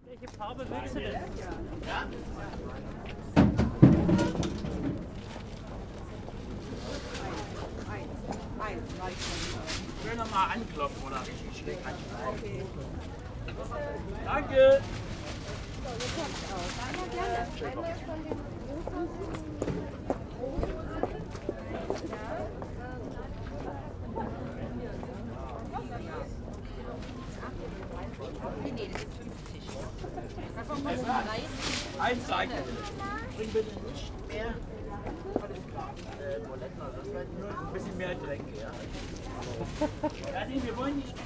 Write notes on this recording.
flower market stall, the city, the country & me: february 15, 2014